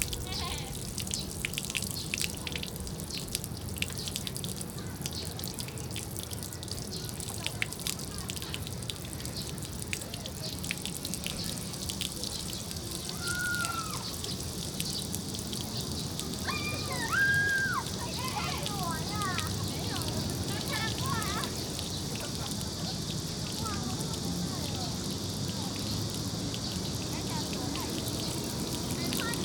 Children and the sound of running water
Sony Hi-MD MZ-RH1+AKG c1000
23 June, 14:23